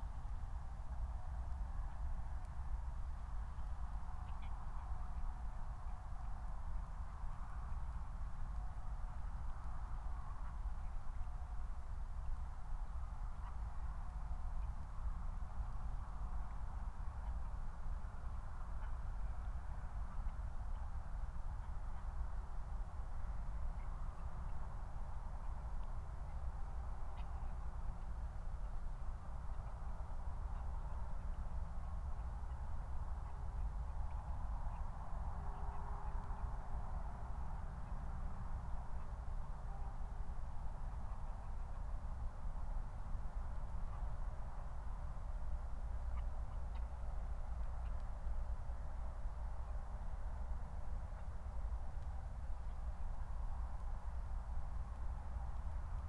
Moorlinse, Berlin Buch - near the pond, ambience
22:14 Moorlinse, Berlin Buch